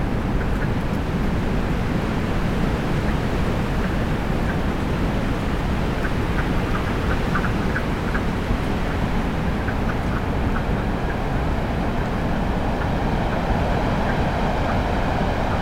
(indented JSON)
{
  "title": "Swamp Edge, Phillips Hill Rd, Coventry, RI, USA - Wind and wood frogs",
  "date": "2021-03-29 12:04:00",
  "description": "I went out to find frogs but it was a little too cold (47ºF) and way too windy so I recorded the sound of wind in the leafless trees, with a few wood frogs in the background. You can also hear Rhoda the puppy scrabbling in the leaves. There is a little wind noise on the microphone but not a lot considering. Recorded with Olympus LS-10 and LOM mikroUši pair with windbubbles",
  "latitude": "41.69",
  "longitude": "-71.64",
  "altitude": "75",
  "timezone": "America/New_York"
}